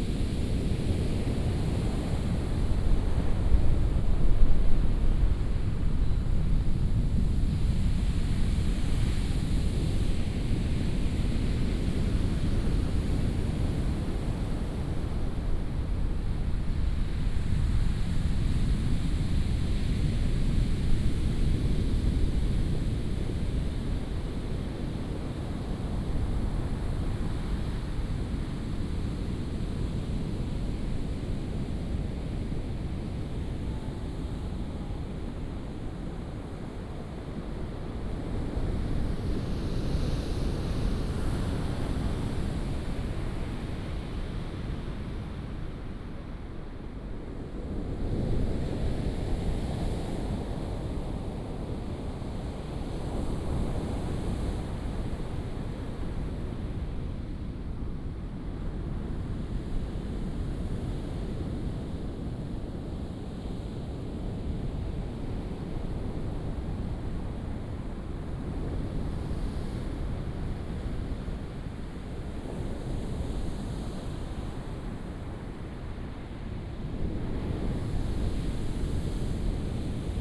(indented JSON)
{
  "title": "舊香蘭, Jiuxianglan, Taimali Township - At the beach",
  "date": "2018-04-01 18:16:00",
  "description": "At the beach, Sound of the waves\nBinaural recordings, Sony PCM D100+ Soundman OKM II",
  "latitude": "22.58",
  "longitude": "121.00",
  "altitude": "5",
  "timezone": "Asia/Taipei"
}